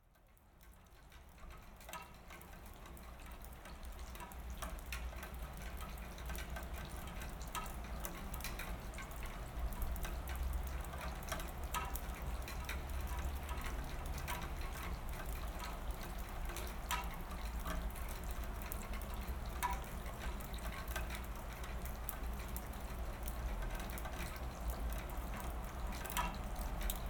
Utena, Lithuania, rain ends...
waiting for the rain to stop. sennheiser ambeo headset recording
Utenos rajono savivaldybė, Utenos apskritis, Lietuva, 11 November 2021